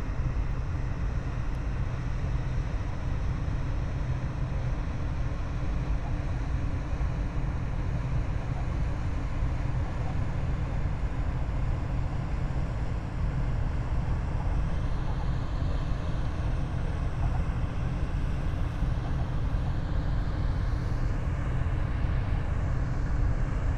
Rhein river banks, Riehl, Köln, Deutschland - ship drone
Köln, river Rhein, ships passing-by, drone of engines, waves
(Tascam iXJ2 / ifon, Primo EM172)